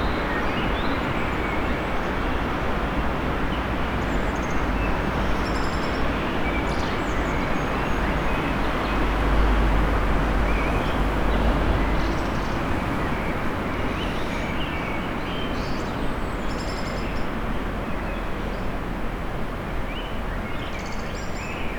Innsbruck, vogelweide, Waltherpark, Österreich - Frühling im Waltherpark/vogelweide, Morgenstimmung
walther, park, vogel, weide, vogelgezwitscher, autoverkehr, stadtgeräusche, singende vögel, winterzeit gegen 5:44, waltherpark, vogelweide, fm vogel, bird lab mapping waltherpark realities experiment III, soundscapes, wiese, parkfeelin, tyrol, austria, anpruggen, st.
Innsbruck, Austria